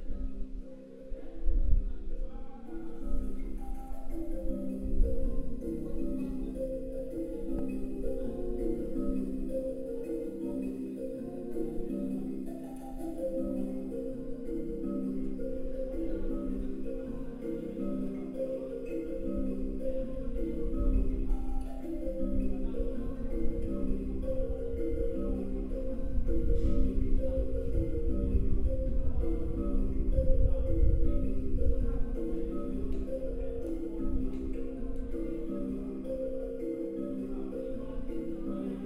National Museum and House of Culture, Kivukoni, Dar es Salaam, Tanzania - Kalimba practice in the garden of the national museum
Two days before their big concert at the national museum and house of culture in Dar es Salaam, the Lumumba Dance and Theater group was practicing in the main auditorium. This recording was taken from outside, in the garden, hanging out near the massive Ficus tree that stands there. Because of the tropical climate, the walls to the auditorium are not air-tight and are made of a lacing of bricks which let out the nice sounds of this Kalimba player practicing his piece.
19 October 2016